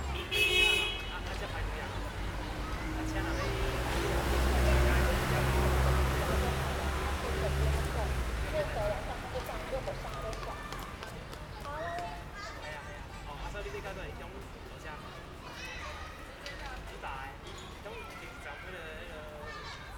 Mingzhi Rd., Taishan Dist. - Follow the visually impaired
On the bus, Walking on the road, Walking through the elementary school, Traffic Sound, Zoom H6